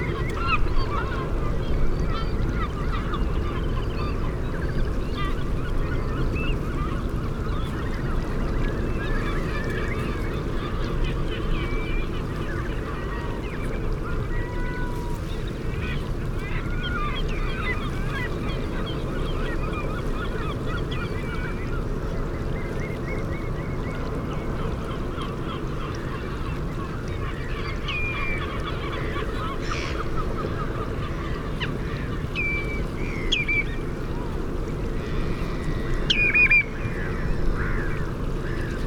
Woodbridge, UK - Cuckolds Lagoon soundscape ...
Cuckolds lagoon soundscape ... RSPB Havergate Island ... fixed parabolic to minidisk ... bird calls ... song from ... canada goose ... curlew ... dunlin ... redshank ... oystercatcher ... ringed plover ... grey plover ... godwit sp ..? black-headed gull ... herring gull ... grey heron ... sandwich tern ... meadow pipit ... lots of background noise ... waves breaking on Orfordness ... ships anchor chains ...